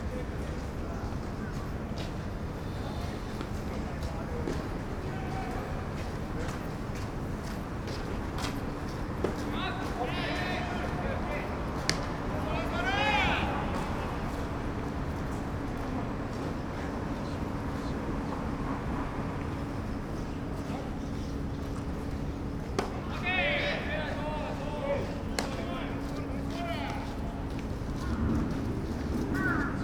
Kinshi, Sumida-ku, Tōkyō-to, Japonia - baseball practice
baseball practice (roland r-07)
Tōkyō-to, Japan